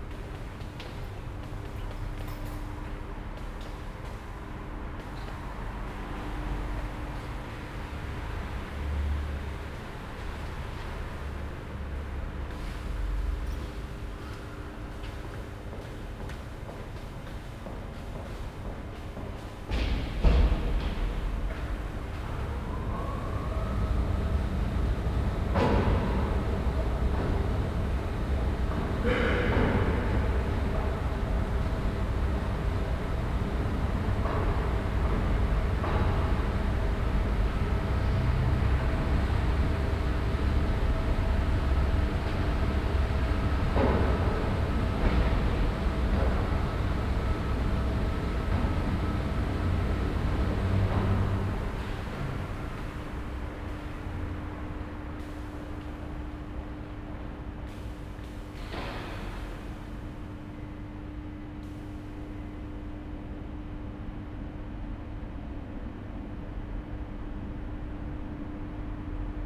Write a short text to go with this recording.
In der U- Bahn Station Essen Philharmonie. Der Klang eines Fahrscheinautomatens, Schritte auf den Treppen, das Anlaufen der Rolltreppen, das Ein- und Ausfahren von Zügen. Inside the subway station. The sound of a ticket, vending machine, then steps, the start of the moving staircases, trains driving in and out of the station. Projekt - Stadtklang//: Hörorte - topographic field recordings and social ambiences